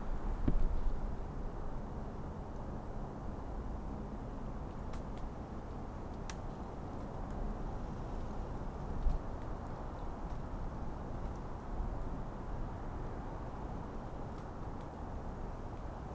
{"title": "Montreal: Melrose Tunnel - Melrose Tunnel", "date": "2009-01-03 23:30:00", "description": "equipment used: Audio Technica Stereo Mic w/Marantz Recorder\nSound of night time just outside of the Melrose Tunnel. You can hear trees crackling in the wind if you listen carefully.", "latitude": "45.47", "longitude": "-73.61", "altitude": "54", "timezone": "America/Montreal"}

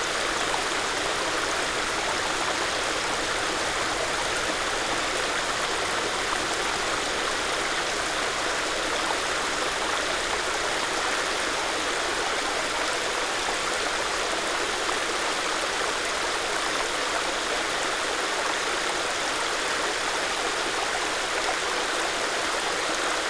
{"title": "SBG, Baumes del Molí de la Codina - Riera", "date": "2011-08-06 16:00:00", "description": "Riera de Les Llobateres a su paso por las Baumes del Molí.", "latitude": "41.96", "longitude": "2.16", "altitude": "644", "timezone": "Europe/Madrid"}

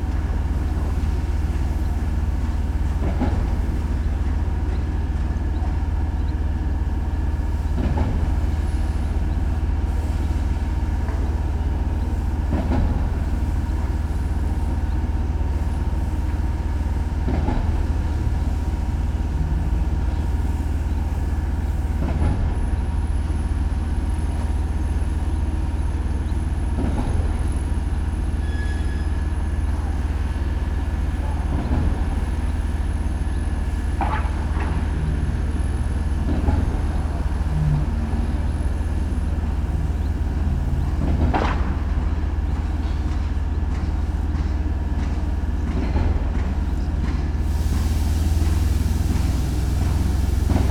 {"title": "Poznan, Mateckiego, parking lot - construction", "date": "2019-06-05 11:30:00", "description": "new apartments being built in the area. thump of the machines, generators, workers drilling and hammering. (roland r-07)", "latitude": "52.46", "longitude": "16.90", "altitude": "97", "timezone": "Europe/Warsaw"}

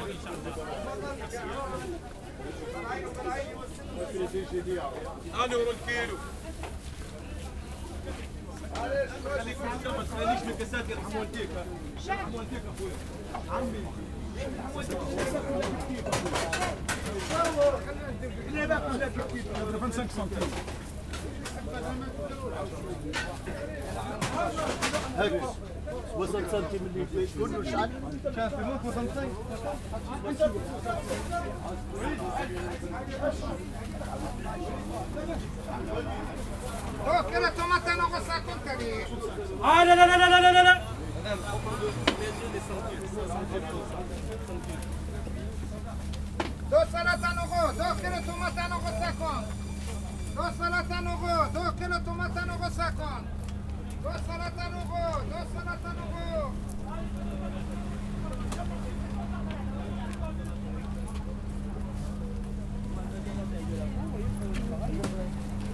{"title": "Belleville, Paris, France - Soundwalk through Pere-Lachaise Market", "date": "2014-08-08 11:45:00", "description": "Soundwalk through Pere-Lachaise Market at Ménilmontant, Paris.\nZoom H4n", "latitude": "48.87", "longitude": "2.38", "altitude": "61", "timezone": "Europe/Paris"}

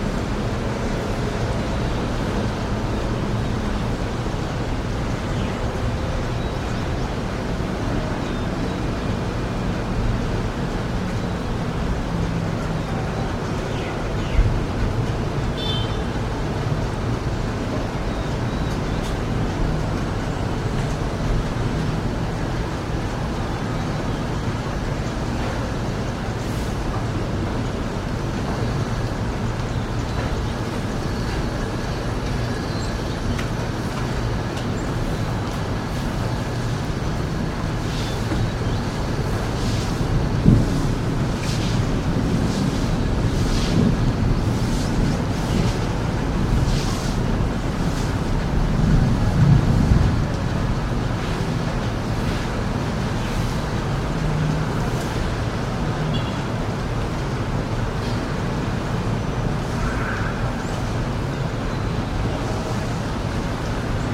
{"title": "The Rain (ฝน) Goes from A Lot to a Little in 20 Minutes", "date": "2010-07-18 14:02:00", "description": "The rain was falling heavily in Bangkok on World Listening Day 2010. It slowed and then stopped. WLD", "latitude": "13.72", "longitude": "100.57", "altitude": "26", "timezone": "Asia/Bangkok"}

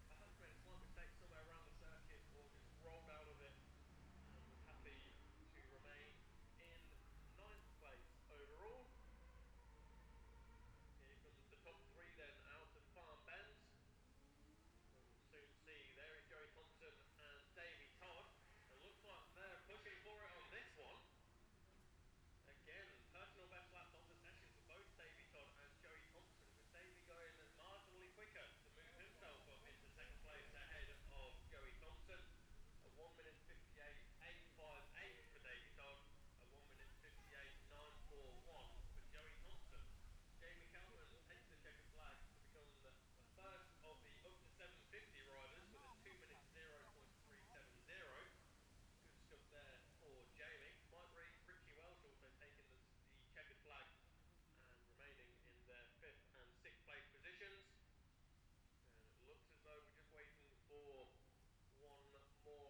{"title": "Jacksons Ln, Scarborough, UK - gold cup 2022 ... lightweight and 650 twins ... qualifying ...", "date": "2022-09-16 13:10:00", "description": "the steve henshaw gold cup 2022 ... lightweight and 650 twins qualifying ... dpa 4060s clipped to bag to zoom f6 ...", "latitude": "54.27", "longitude": "-0.41", "altitude": "144", "timezone": "Europe/London"}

